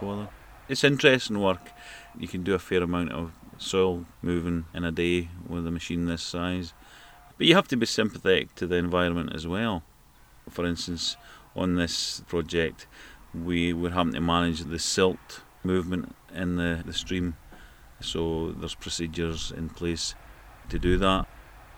Eddleston, Scottish Borders, UK - River Voices - Scott McColm, digger driver
Field interview with Scott McColm, digger driver, who is reameandering a section of the Eddleston Water in the River Tweed catchment in the Scottish Borders. Scott talks about different types of land drainage and the qualities of silt, clay and gravel in the flood plain.